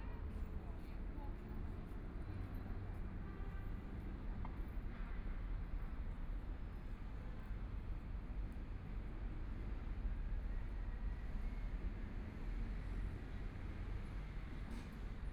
Footsteps, The distant sound of construction sites, Traffic Sound, Binaural recording, Zoom H6+ Soundman OKM II